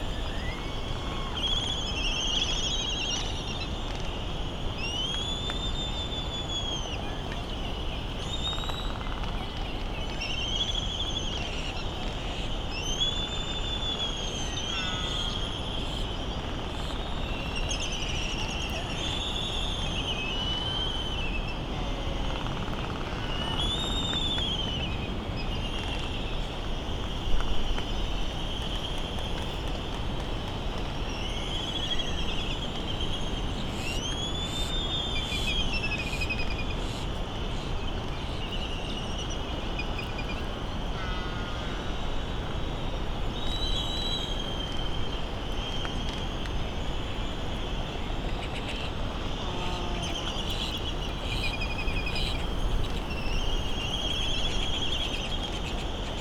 Hawaiian Islands, USA - Seep soundscape ...
Seep ... Sand Island ... Midway Atoll ... grey very windy day ... birds calling ... laysan duck ... laysan albatross calls and bill clapperings ... canaries ... red-tailed tropic bird ... open lavalier mics ...